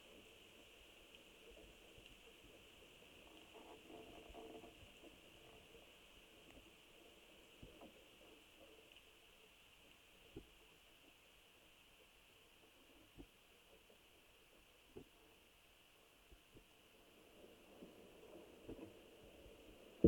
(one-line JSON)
{"title": "Powell Street, Avondale, Auckland, New Zealand - Puriri tree sounds at night", "date": "2020-08-09 21:39:00", "description": "Contact microphone bound with tyre inner tube to trunk of sappling Pūriri tree in Oakley Creek", "latitude": "-36.89", "longitude": "174.71", "altitude": "39", "timezone": "Pacific/Auckland"}